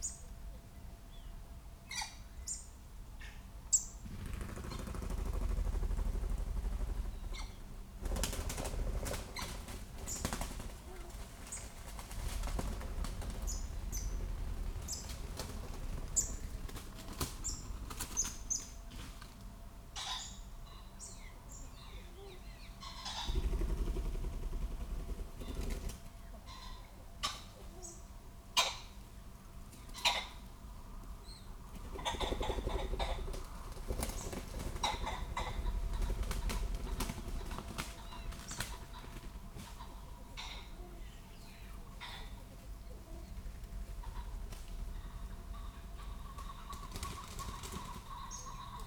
Green Ln, Malton, UK - Pheasants coming to roost ...
Pheasants coming to roost ... open lavalier mics clipped to sandwich box on tree trunk ... bird calls from robin ... blackbird ... crow ... tawny owl ... plenty of background noise ... females make high pitched peeps ... males hoarse calls ... and plenty of whirrings and rattling of wings when they fly to roost ...